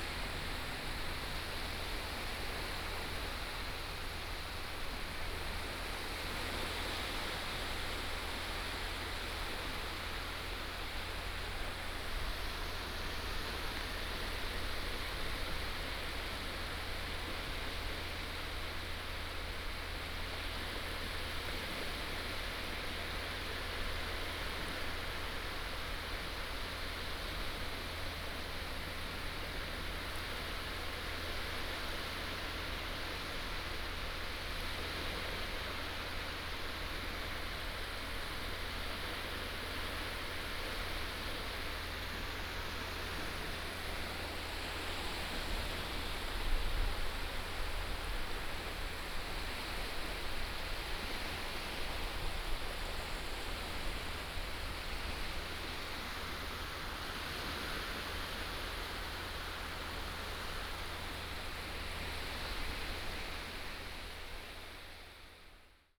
幸福沙灣, Hsinchu City - Seawater begins to boom
Seawater begins to boom, On the beach, Binaural recordings, Sony PCM D100+ Soundman OKM II